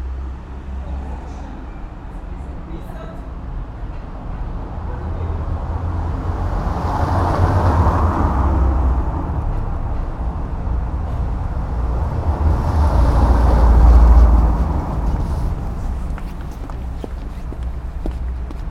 Vidovdanska cesta, Ljubljana, Slovenia - paved street
walk, people passing by, bikes, winds in tree crown, people talking, car traffic ...
Zahodna Slovenija, Slovenija, 9 April 2013